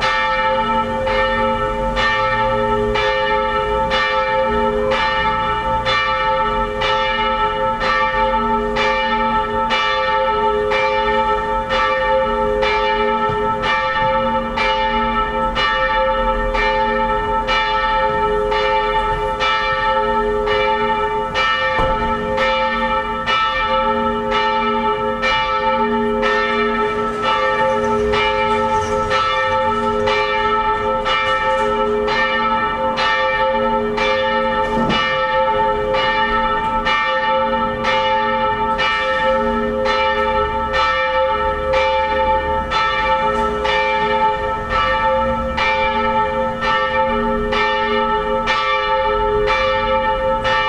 {"title": "Königsbrücker Pl., Dresden, Deutschland - Kirchenglocke", "date": "2017-08-19 18:00:00", "latitude": "51.08", "longitude": "13.75", "altitude": "119", "timezone": "Europe/Berlin"}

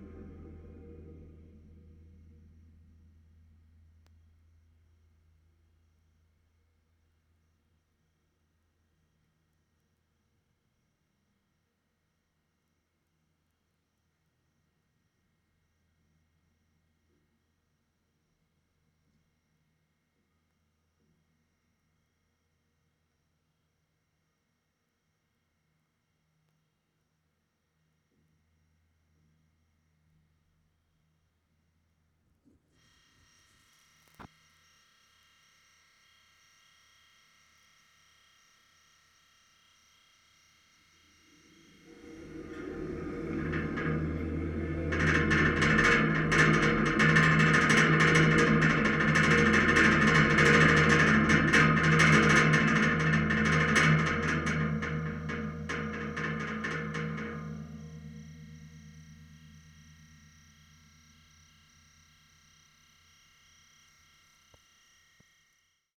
{"title": "Auf dem Kiewitt, Potsdam, Deutschland - Auf dem Kiewitt, Potsdam - Contact mic at the railing of the bridge", "date": "2016-11-09 17:34:00", "description": "A contact mic is attached to the handrail of the bridge, recording steps of people on the bridge as well as passing trains. Recorded during the workshop ›Listening to the Environment‹ with Peter Cusack at ZeM – Brandenburgisches Zentrum für Medienwissenschaften, Potsdam.\n[Piezo mic made by Simon Bauer/Sony PCM-D100]", "latitude": "52.39", "longitude": "13.04", "altitude": "29", "timezone": "GMT+1"}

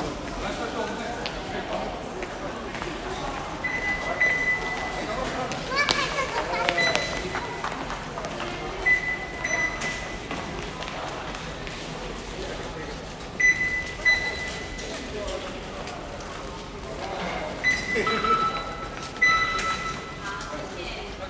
{"title": "Sinsing, Kaohsiung - Formosa Boulevard Station", "date": "2012-02-01 16:55:00", "latitude": "22.63", "longitude": "120.30", "altitude": "13", "timezone": "Asia/Taipei"}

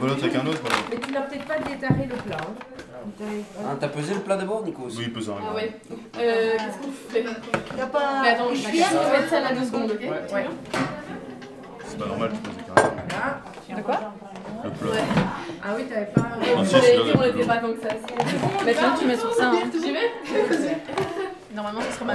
{"title": "L'Hocaille, Ottignies-Louvain-la-Neuve, Belgique - KAP Le Levant", "date": "2016-03-24 15:20:00", "description": "This is the continuation of the first recording, the workshop is beginning. As there's a lot of people, a few place (kots are small) and very friendly ambience, it's very noisy ! People begin to learn how to make bread.", "latitude": "50.67", "longitude": "4.61", "altitude": "125", "timezone": "Europe/Brussels"}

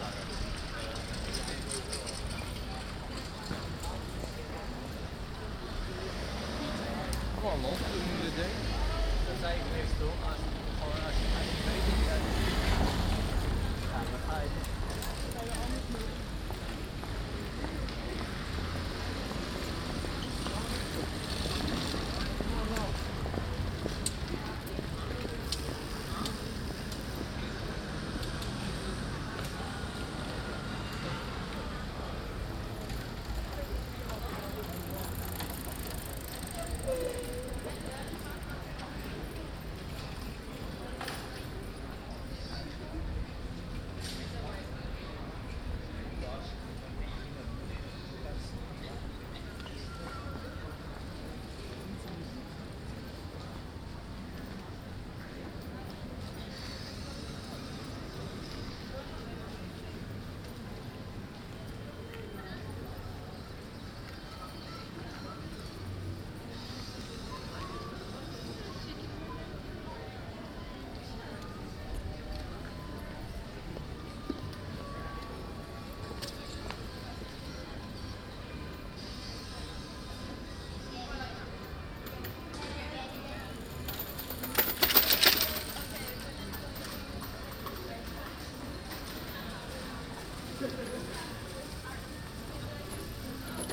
{"title": "Kortenbos, Den Haag, Nederland - Rond de Grote Kerk", "date": "2015-10-03 16:05:00", "description": "Binaural recording.\nA sunny Saturday afternoon around the big church in The Hague. The actual street name is Rond de Grote Kerk which means ‘Around the big church’.\nEen zonnige zaterdagsmiddag rond de Grote Kerk in Den Haag. Rond de Grote Kerk is ook daadwerkelijk de straatnaam.", "latitude": "52.08", "longitude": "4.31", "altitude": "9", "timezone": "Europe/Amsterdam"}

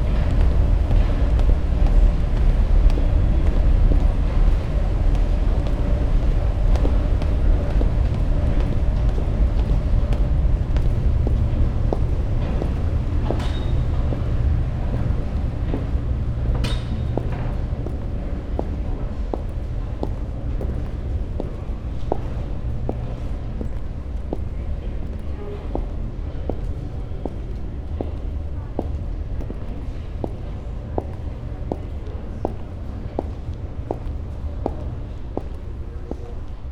{"title": "U-bahn Güntzelstraße, Berlin - dry leaves, walking", "date": "2015-11-08 11:39:00", "description": "Sonopoetic paths Berlin", "latitude": "52.49", "longitude": "13.33", "altitude": "39", "timezone": "Europe/Berlin"}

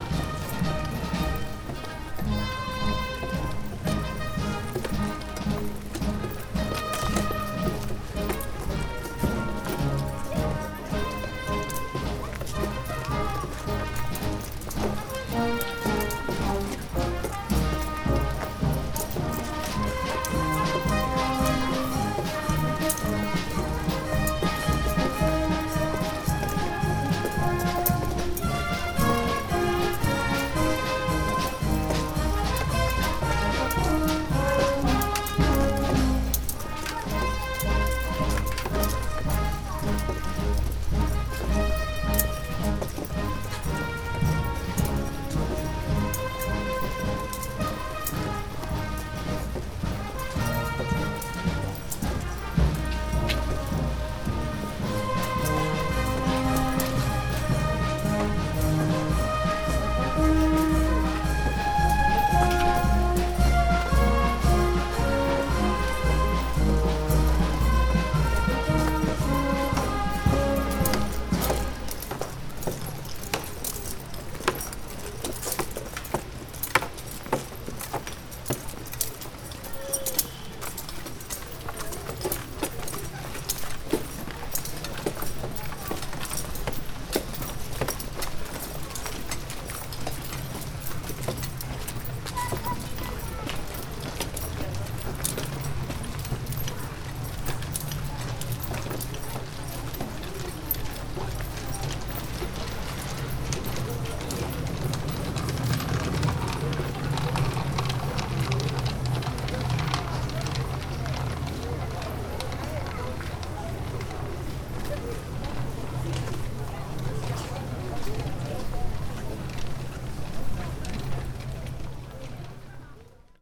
{"title": "Roma, IT, Rione Celio - Church parade for San Clemente", "date": "2014-11-22 18:38:00", "description": "Roma, Rione Celio, church parade for San Clemente, walking with Romans in armour - TASCAM DR-2d, internal mics", "latitude": "41.89", "longitude": "12.50", "altitude": "45", "timezone": "Europe/Rome"}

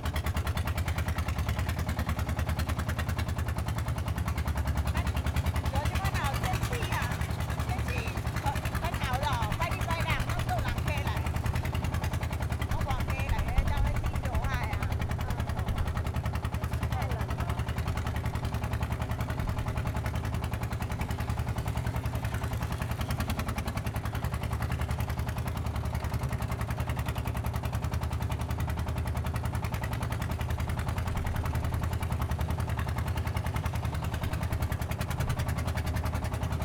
{"title": "芳苑村, Fangyuan Township - Small trucks", "date": "2014-03-09 08:59:00", "description": "Small trucks, The sound of the wind, On the streets of a small village, Oysters mining truck\nZoom H6 MS", "latitude": "23.93", "longitude": "120.32", "altitude": "6", "timezone": "Asia/Taipei"}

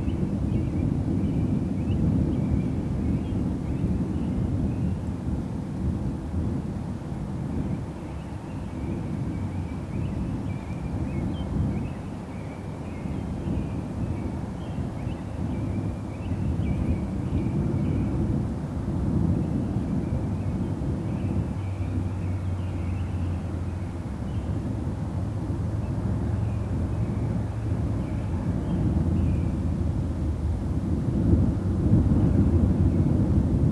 {"title": "oil spill site, Grand Marsh, Wi, USA - Enbridge Line 14 oil pipeline spill site", "date": "2013-05-05 17:09:00", "description": "1000 barrels of Canadian tar sands crude oil spilled here on July 27 2012. Less than one year later, excavation and repair work is still clearly visible due to distinct plants which grow only where topsoil was disturbed. Expect many more spills such as this due to pipeline rupture, negligence, and other causes all across the country if / when the XL pipeline gets built.", "latitude": "43.88", "longitude": "-89.62", "altitude": "303", "timezone": "America/Chicago"}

